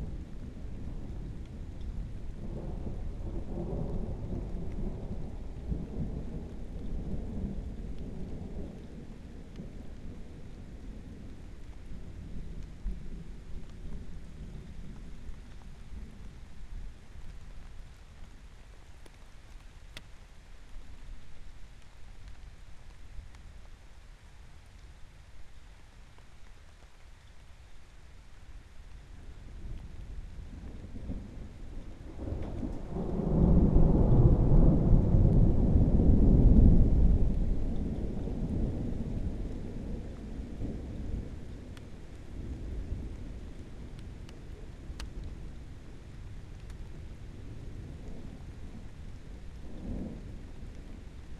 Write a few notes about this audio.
Garden at home. EM172 capsules on small polycarbonate disc with wind protection to a SD702 recorder. Mounted on a tripod about 1200mm above ground level.